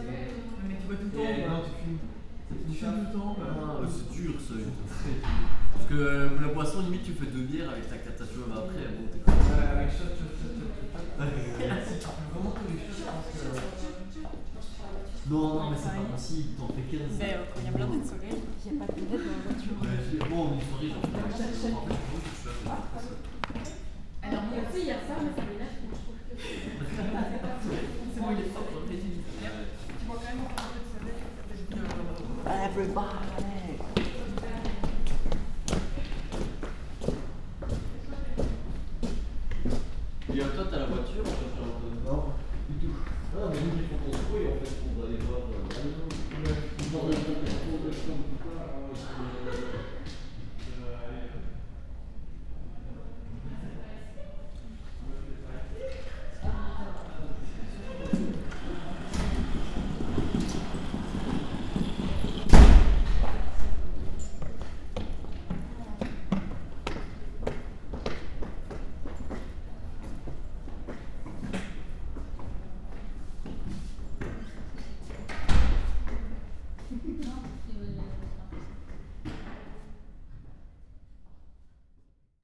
Quartier des Bruyères, Ottignies-Louvain-la-Neuve, Belgique - End of a course
End of a course, students are discussing and go away to the next course.